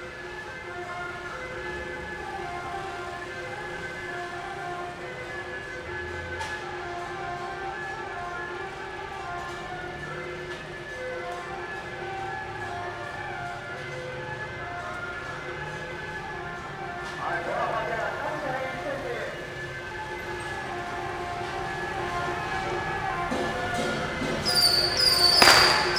Cianjhen, Kaohsiung - in front of Temple square
17 March, 16:46